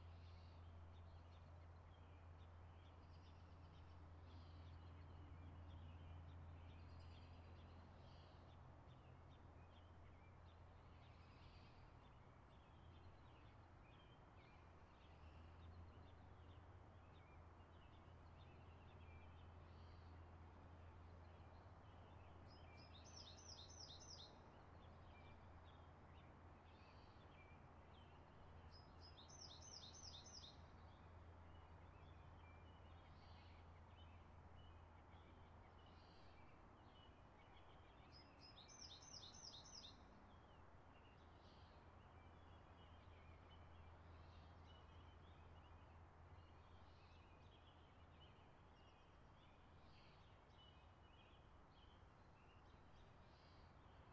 Mountain blvd. Oakland - MBLVD ambience
last of the recordings from Mountain blvd.